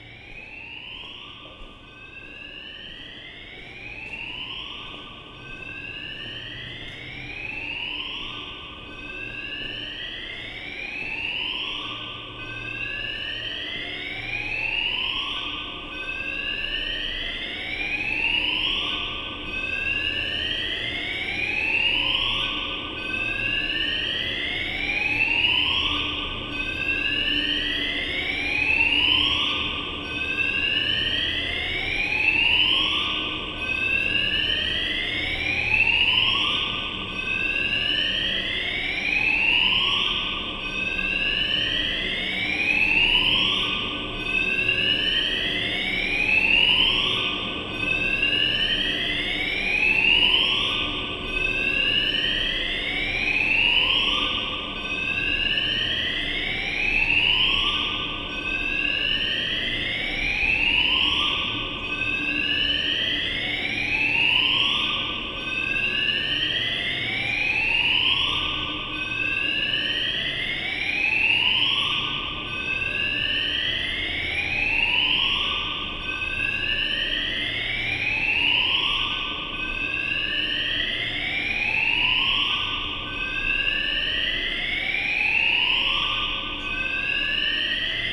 A fire alarm rings in an underground car park, causing an hurly-burly.
Maastricht, Netherlands, 20 October 2018, 16:30